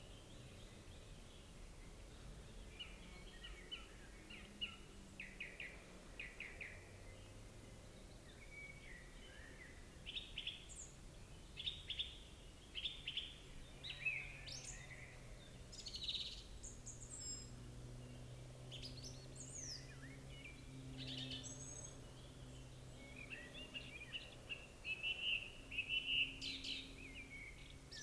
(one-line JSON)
{"title": "Schluchsee, Deutschland - forrest", "date": "2022-05-28 18:27:00", "description": "early evening end of may at the edge of the forest, before sunset; birds, distant saw, distant traffic. sennheiser ambeo headset", "latitude": "47.84", "longitude": "8.15", "altitude": "1137", "timezone": "Europe/Berlin"}